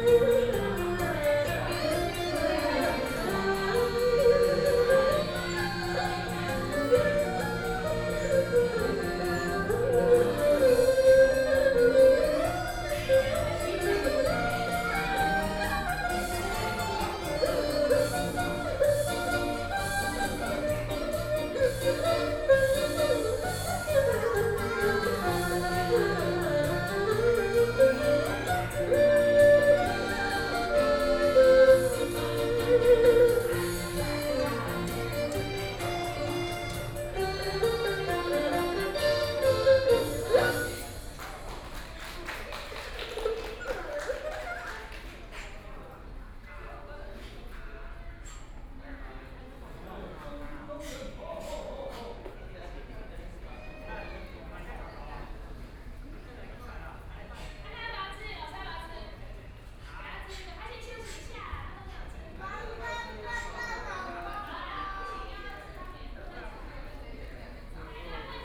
Sitting inside seating area, Shopping street sounds, The sound of the crowd, walking out of the rest area, Binaural recording, Zoom H6+ Soundman OKM II
Hsinchu County, Guanxi Township